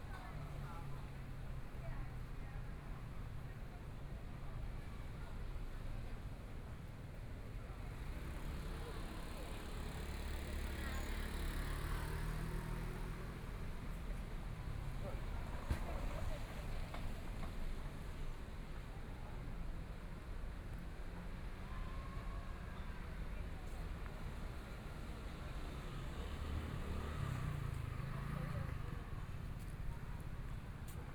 Shuangcheng St., Taipei City - Walking across the different streets
Walking across the different streets, Environmental sounds, Motorcycle sound, Traffic Sound, Binaural recordings, Zoom H4n+ Soundman OKM II